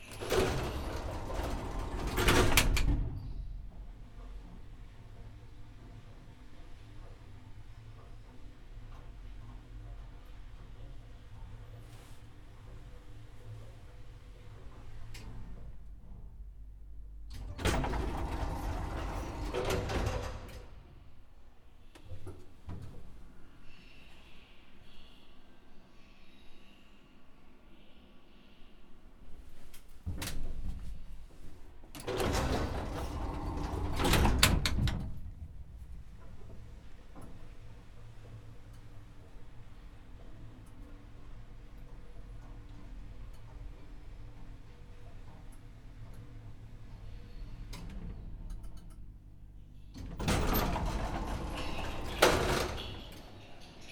Universita deligi Studi, Milan, miniature elevator
a ride with a very small elevator, max 2 people could fit in there, i went up to the 2nd floor and back down
Milan, Italy